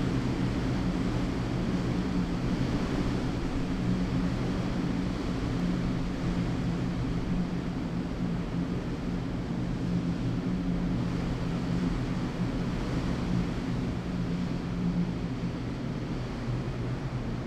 hilltop underneath big antenna array recorded in the wind shadow of a building, wind force S 29km/h
Cerro Sombrero was founded in 1958 as a residential and services centre for the national Petroleum Company (ENAP) in Tierra del Fuego.